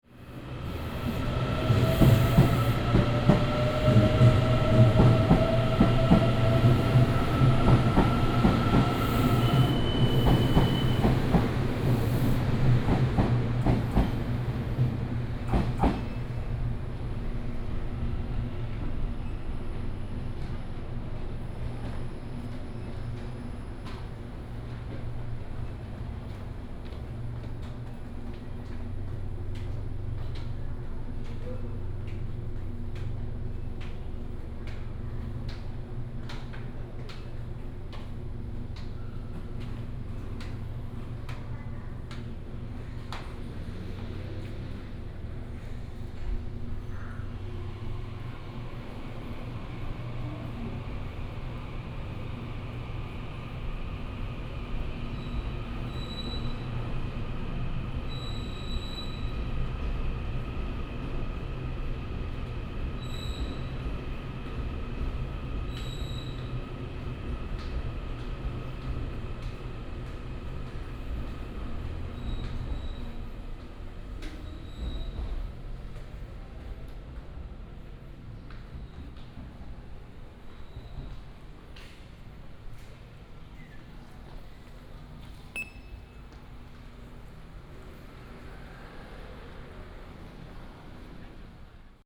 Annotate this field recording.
From the station platform, To the station hall, Go outside the station, Footsteps